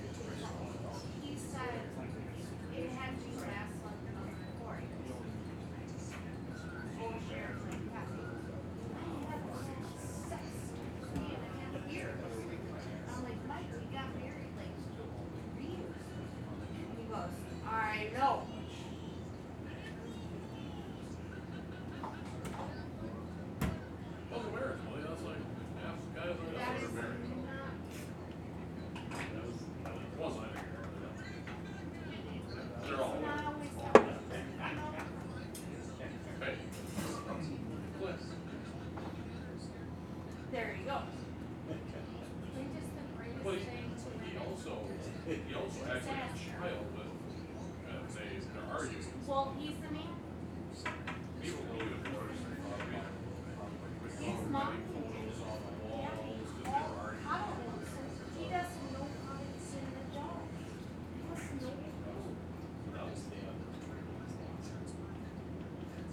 Bass Lake Cheese Factory - Lunch at the Bass Lake Cheese Factory
The sounds of eating lunch inside the Bass Lake Cheese Factory